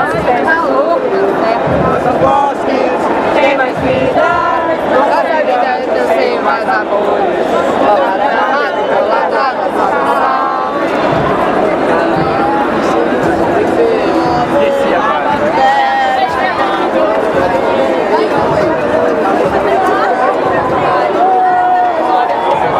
Av Rio Branco, Rio de Janeiro - RJ, República Federativa do Brasil - Protest on Rio Branco avenue in Rio de Janeiro
More than 100,000 people protest at Rio Branco Avenue in Rio de Janeiro.
Thousands Gather for Protests in Brazil’s Largest Cities
Protesters showed up by the thousands in Brazil’s largest cities on Monday night in a remarkable display of strength for an agitation that had begun with small protests against bus-fare increases, then evolved into a broader movement by groups and individuals irate over a range of issues including the country’s high cost of living and lavish new stadium projects.
The growing protests rank among the largest and most resonant since the nation’s military dictatorship ended in 1985, with demonstrators numbering into the tens of thousands gathered here in São Paulo, Brazil’s largest city, and other large protests unfolding in cities like Rio de Janeiro, Salvador, Curitiba, Belém and Brasília, the capital, where marchers made their way to the roof of Congress.